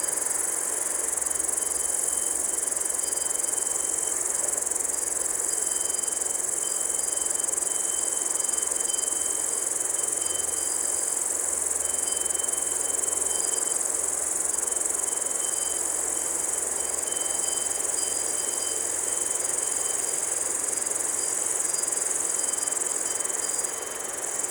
Hinterthal, Austria - Dusk insects, cows and cars
In a meadow above the town of Hinterthal. Nice insects, cowbells, and at the end some passing cars on the road below. Telinga stereo parabolic mic with Tascam DR-680mkII recorder.